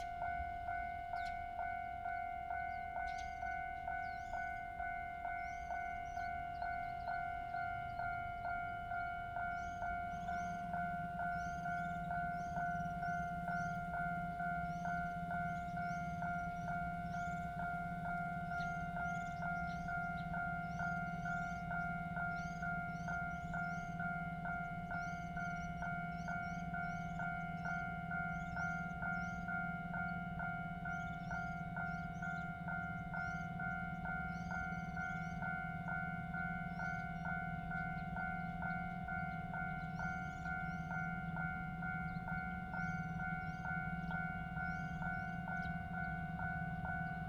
擺塘村, Dacun Township, Changhua County - Next to the railroad tracks
Next to the railroad tracks, The train runs through
Zoom H2n MS+XY